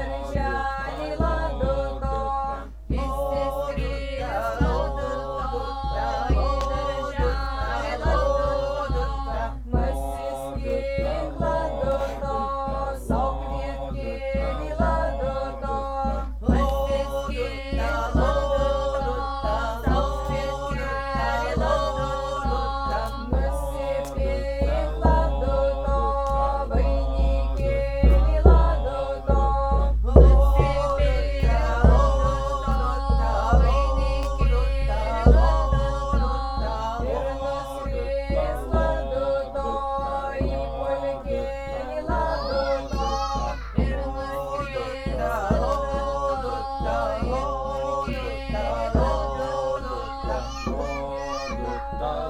Lithuania - TU GERVAL, LADUTO (KETURINĖ)